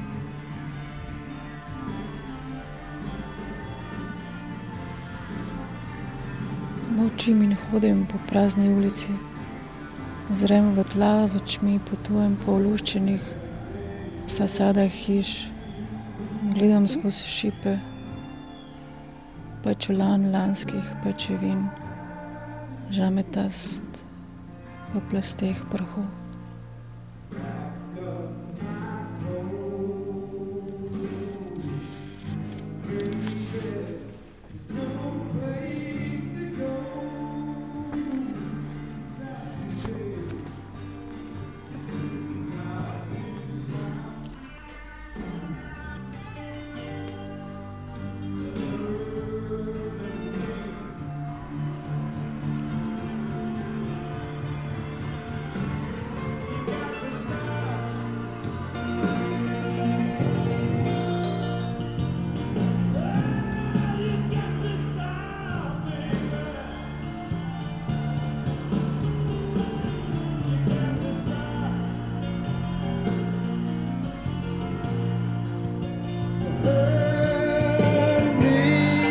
ex casino, Maribor - cafe salon
recorning of a live session with phone to radio aporee
saturday morning, spoken words, leaves of small red notebook
Maribor, Slovenia, 18 April 2015, ~11:00